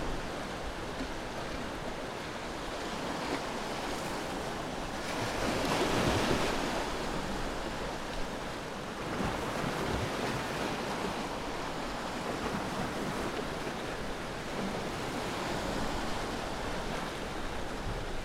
Grabación en la zona de la entrada al puerto en El Perelló en una zona rocosa cerca de unos faros mientras comenzaba a atardecer.
València / Valencia, Comunitat Valenciana, España, 17 August 2020